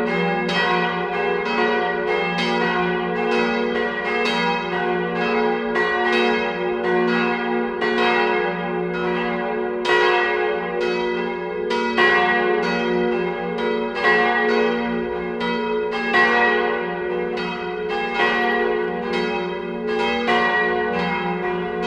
Vingst, Köln, Deutschland - Glocken von Sankt Theodor / Bells of St. Theodor
Das Ende des Läutens der Glocken von Sankt Theodor vor dem Silvestergottesdienst.
The end of the ringing of the bells of St. Theodor before New Year's Eve service.